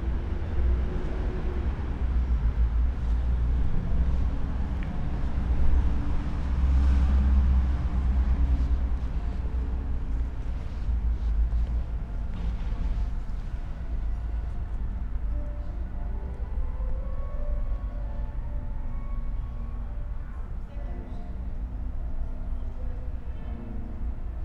École supérieure d'art d'Aix-en-Provence - yard walk
walk in the yard of Aix-en-Provence artschool, following a bird with no success
(PCM D50, PrimoEM172)